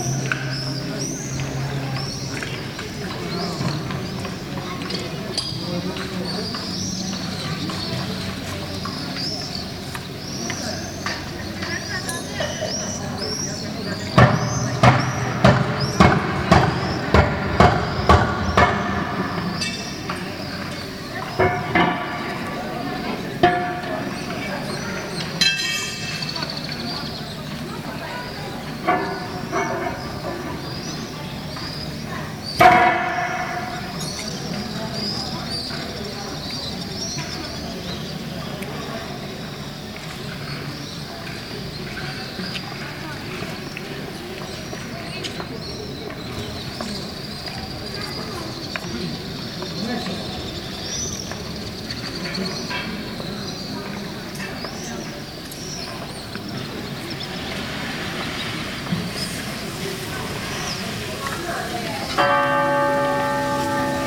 Dubrovnik, July 1992, washing the pavement after 9 months of siege - Stradun, 1992, water finally
main street of old Dubrovnik, voices of inhabitants, swallows, city-tower bells, voices of workers openning access to water, jet of water